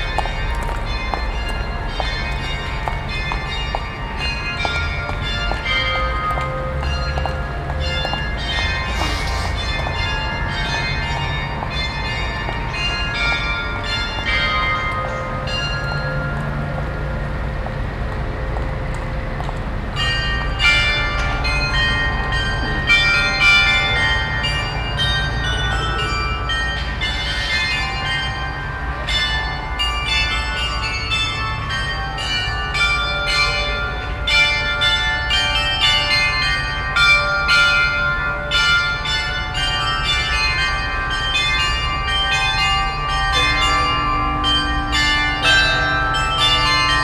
Bergisch Gladbach, Deutschland - Bergisch Gladbach - noon city bells
Outside near the local market place - the sound of a public automatic city bell and the noon church bells. Passengers walking by on the cobblestone pavement.
soundmap nrw - social ambiences and topographic field recordings